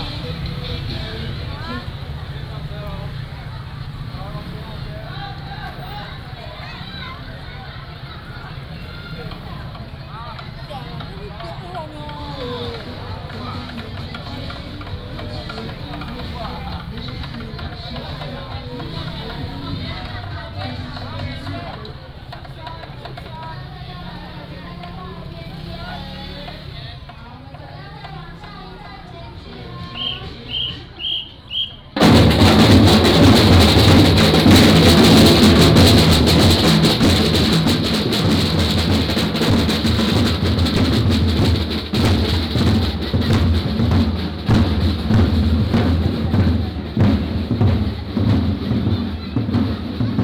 南寮村, Lüdao Township - walking in the Street
walking in the Street, Halloween festival parade